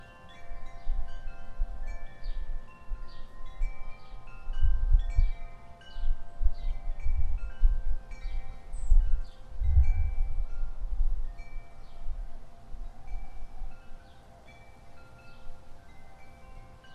los angeles, 2008, windchimes, invisisci